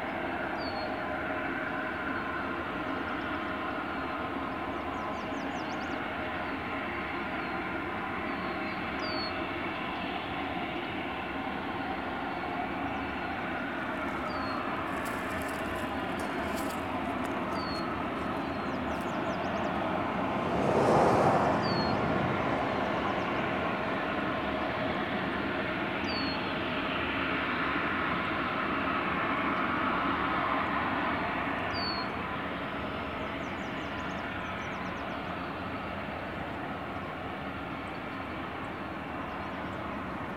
Kewaunee Nuclear Power Plant - Kewaunee Nuclear Plant Shut Down
On May 7, 2013 @ 11:15am, the Kewaunee nuclear power plant generated its last megawatt. Steam blowoff began shortly afterwards, producing the constant hissing sound in this recording. At over 500 degrees F, this pressurized vapor billowed out from vents around the base of the cooling tower for nearly 24 hours. Turbines stopped. The conducting power lines radiating outwards, strung high above surrounding dairy farms, went dead. The plant was taken off the grid forever. The radioactive waste will take months to be placed into cooling pools. By 2019, the radioactive fuel will be encased in temporary storage casks. Unless a permanent waste burial site is opened in America, this material will be buried here for the indefinite future, slowly shedding radioactive energy for millions of years. As with all decommissioned nuclear sites, this place will outlast almost every other manmade object on Earth, long after our extinction as a species. Behold another monument to the Anthropocene.